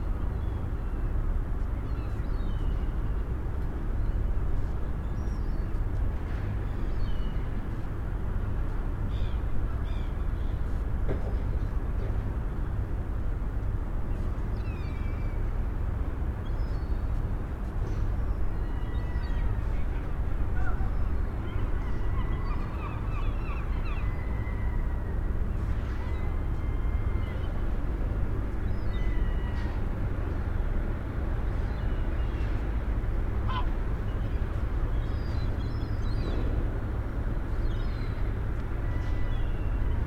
Seagulls, fishing trawlers, cooling units - the typical sounds of a fishing port. Zoom H2.